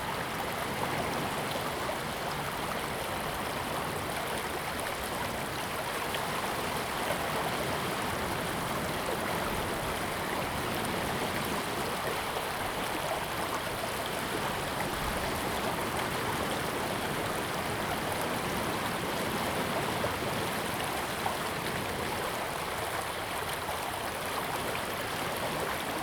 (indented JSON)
{
  "title": "六塊厝, Tamsui Dist., New Taipei City - the waves and stream sound",
  "date": "2016-04-16 06:48:00",
  "description": "Sound of the waves, stream sound\nZoom H2n MS+XY",
  "latitude": "25.24",
  "longitude": "121.45",
  "altitude": "3",
  "timezone": "Asia/Taipei"
}